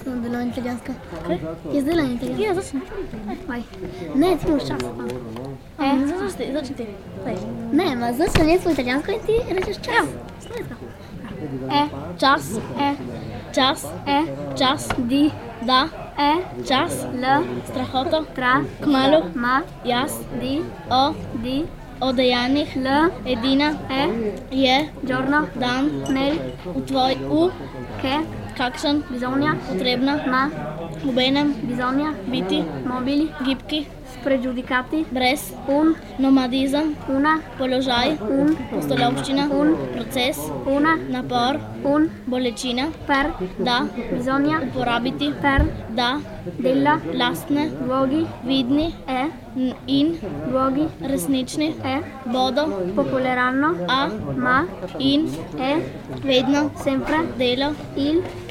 Stazione Topolo 1999, children speaking Slovene and Italian
Grimacco UD, Italy, 11 May